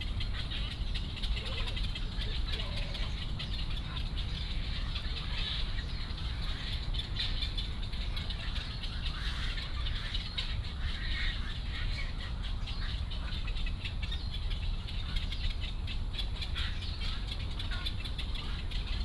Daan Forest Park, Taiwan - Bird calls
in the Park, Bird calls, Walking along the ecological pool
Da’an District, Taipei City, Taiwan, 4 June 2015